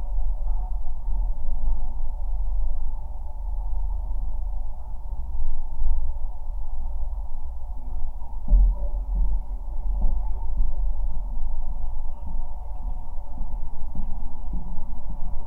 Daugavpils, Latvia, on closed bridge
new LOM geophone on new and still closed for cars bridge's metallic construction